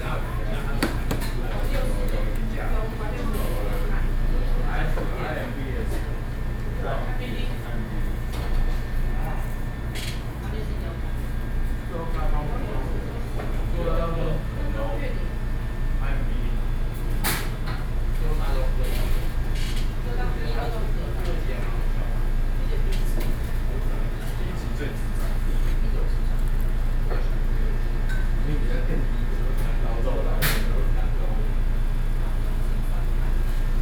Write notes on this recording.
In a restaurant, Air-conditioning noise, Sony PCM D50 + Soundman OKM II